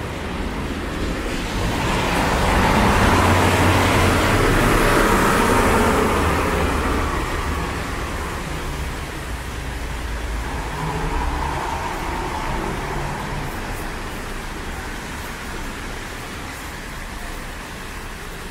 Nikoloyamskaya Ulitsa, Moskva, Russia - cold II